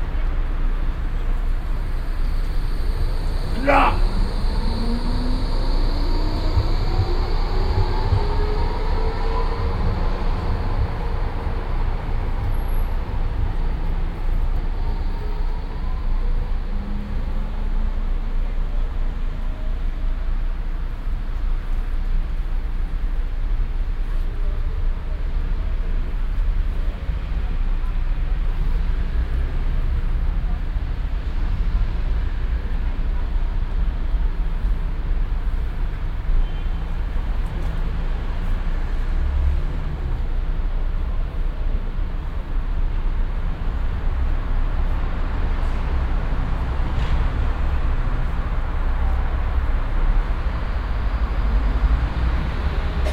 drunken homeless man at tram station uttering single vowels while tram arrives
soundmap d: social ambiences/ listen to the people - in & outdoor nearfield recordings